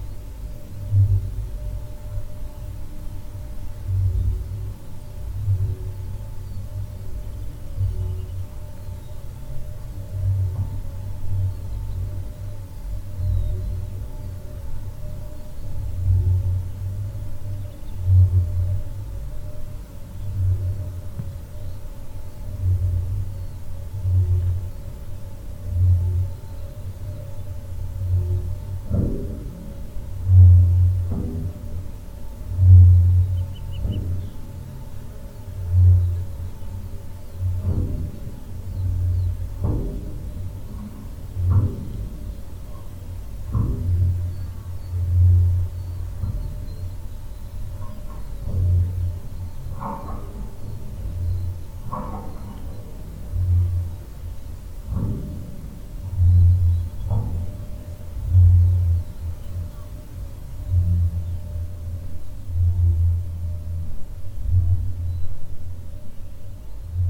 heinerscheid, wind mill
At a wind mill tower of an older wind energy plant. The low sound of a regular mechanic move plus some metallic accents.
Heinerscheid, Windmühle
Bei einer Windmühle von einem älteren Windenergiepark. Das tiefe Geräusch von der regelmäßigen mechanischen Bewegung sowie einige metallene Akzente.
Heinerscheid, éolienne
Le mât d’une éolienne dans une ancienne ferme éolienne. Le bruit bas d’un mécanisme régulier plus quelques bruits métalliques.
Project - Klangraum Our - topographic field recordings, sound objects and social ambiences